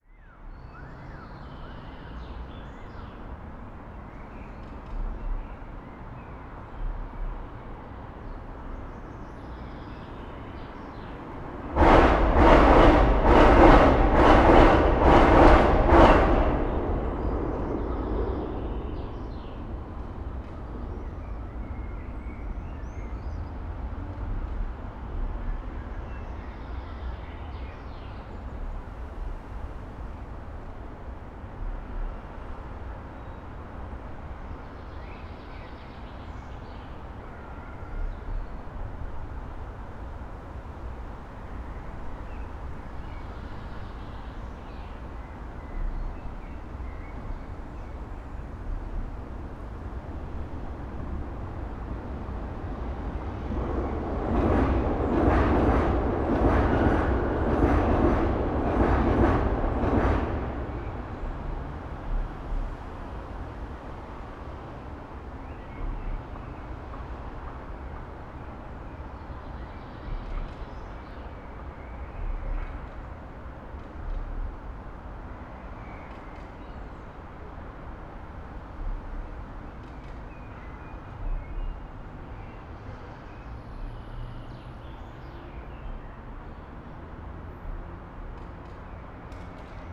Praha, Park Karlov, under bridge
soundscape under bridge. under the street level there is another layer fo trains.